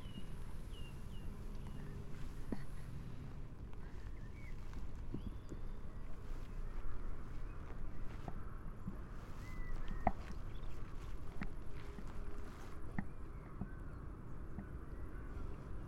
Dijk, Kunstgemaal Bronkhorst, Netherlands - night parabol
Nighttime recording. Telinga Parabolic microphone.
Recording made for the project "Over de grens - de overkant" by BMB con. featuring Wineke van Muiswinkel.